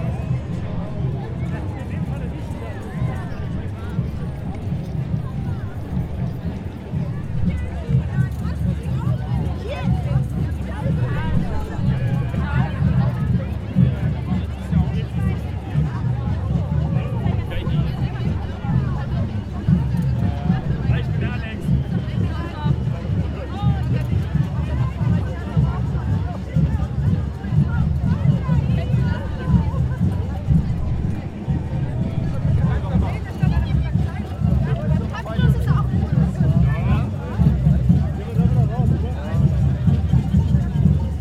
Breslauer Platz, Köln, Deutschland - Geisterzug / Ghosts Parade
Am Karnevals-Samstag findet in Köln jedes Jahr der Geisterzug (Kölsch: Jeisterzoch) statt. Vor dem Zug fährt ein Polizeifahrzeug, dann folgen viele phantasievoll verkleidete Menschen und Gruppen. Es ziehen im Zug auch Gruppen mit Musikinstrumenten mit.
Die Aufnahmestandort wurde nicht verändert. Im Gedränge ist es leider ein paarmal vorgekommen, dass Passanten das Mikrophon berührten.
On Carnival Saturday is in Cologne every year the Ghost Parade (For Cologne native speakers: "Jeisterzoch"). Before the parade drives a slowly police car, then follow many imaginatively dressed people and groups. In the parade also aere many groups with musical instruments.
The receiving location has not changed. In the crowd it unfortunately happened a several times that some people touched the microphone.
Köln, Germany, February 2016